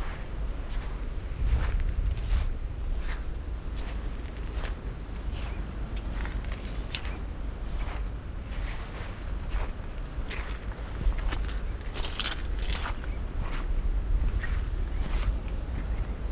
gang durch und über schlickigen sand, nasse felsen, muschelreste, kleine steine be ebbe
fieldrecordings international:
social ambiences, topographic fieldrecordings
meeresufer